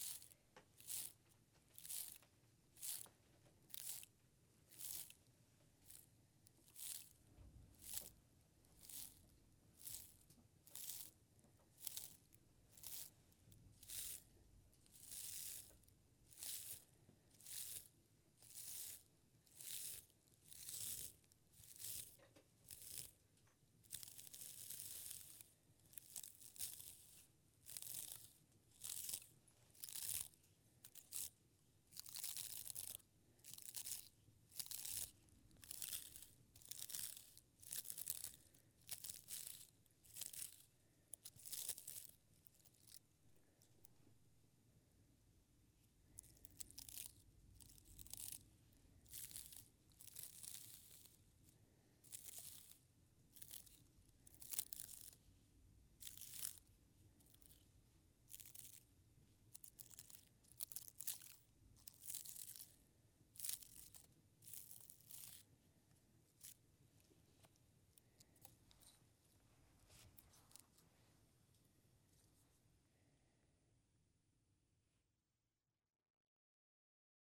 This is the sound of me and Diana carding wool using teasels. In this recording we are sitting by the fireside in a non-centrally heated house, as people have done for thousands of years, using teasels to card (or organise) some raw wool fibres. I think we were using Herdwick fibres for this activity; you can hear the scratchy tines of the teasels, but also the grip and sturdiness of the Herdwick fibres. Of course you can also hear the low steady comforting drone of the fire, also.
Windermere, Cumbria, UK